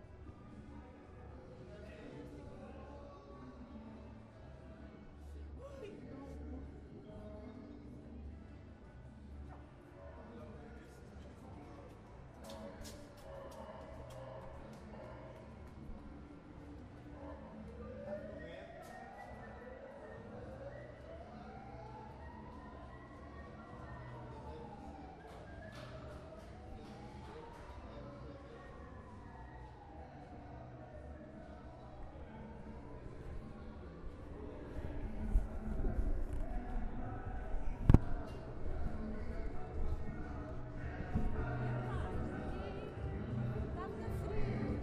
Lisboa, Rua Garett, Night life
Nightlife ar 3am
Portugal, European Union